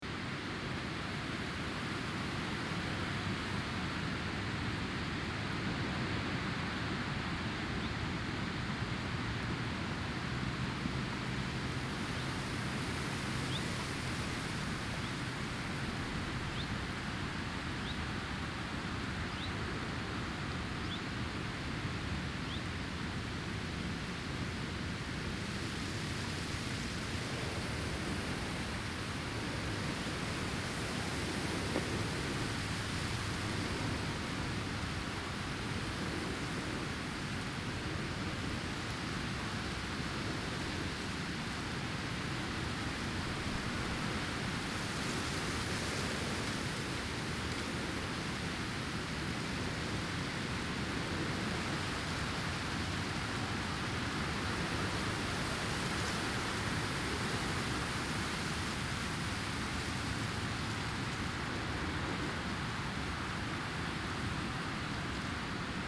Tandel, Luxemburg - Longsdorf, wheat field in the wind
An einem Weizenfeld an einem windigen Sommertag. Der Klang des Windes in den bewegten Weizenähren.
At a wheat field on a windy summer day. The sound of the wind moving wheat ears.
Tandel, Luxembourg, 7 August 2012